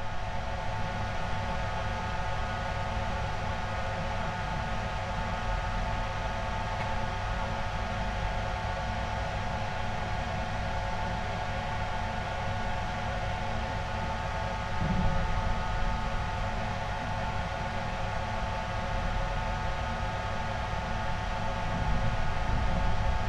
pohorje mountain watchtower
the resonance inside a window frame at the base of a mountain watchtower which also provided power to a ski-lift